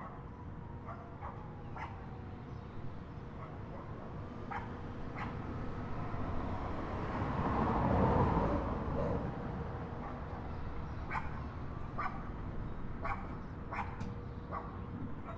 neoscenes: dogs and a chopper